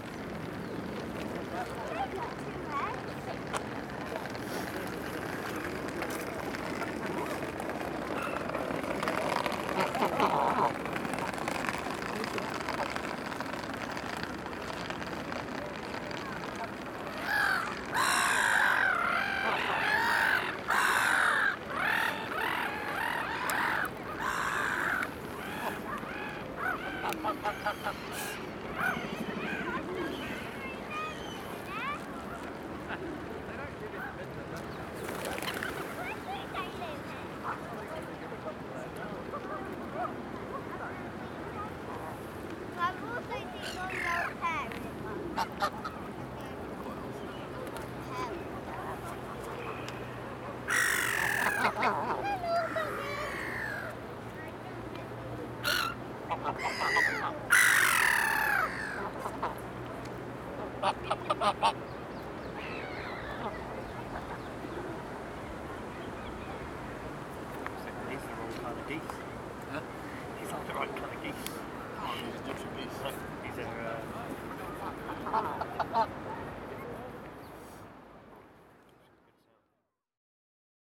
London, UK, St James Park - Chattering Geese
Went to do some test recordings for a project regarding geese, thought this was a nice interaction. The geese were expecting to be fed, instead were faced with a microphone. They came pretty close up and were basically were honking into the mic.
17 February, ~13:00